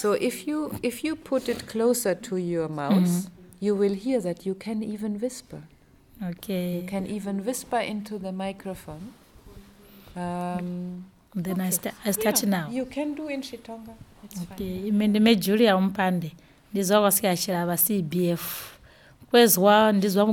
Tusimpe Pastoral Centre, Binga, Zimbabwe - how the BaTonga women produce millet flour...
our training of detailed descriptions continued with Julia Mumpande, Zubo's community based facilitator for Siachilaba, who describes here how the Batonge women plant and harvest the traditional staple grain millet (zembwe), how they pound and then grind it on a stone...